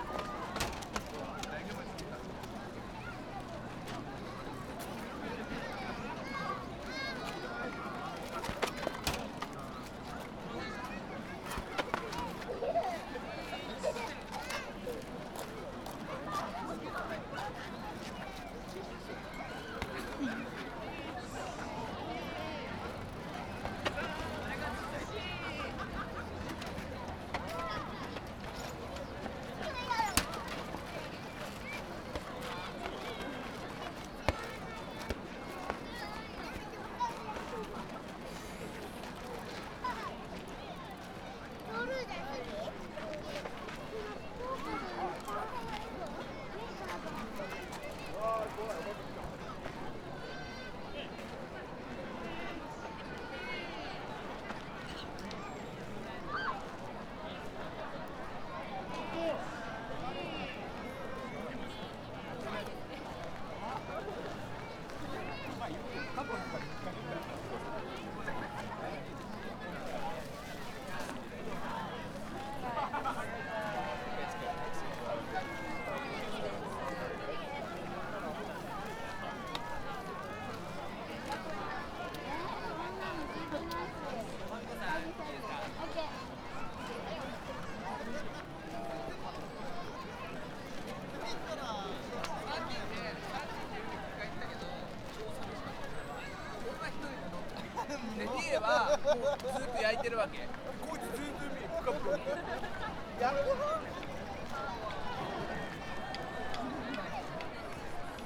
Osaka, Utsubohonmachi district, Utsobo park - Sunday picnic
city dwellers having a picnic, playing games, talking, barbecuing, cheerful atmosphere.